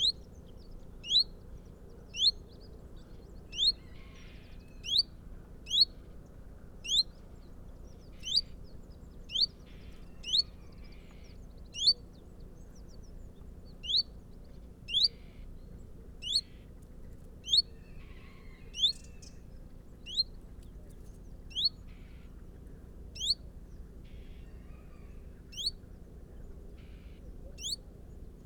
Unnamed Road, Malton, UK - chiffchaff and tractor ...
chiffchaff and tractor ... chiffchaff call ... juxta-positioned with the mating call of a reversing tractor ... loading bales onto an articulated lorry in the middle of a field ...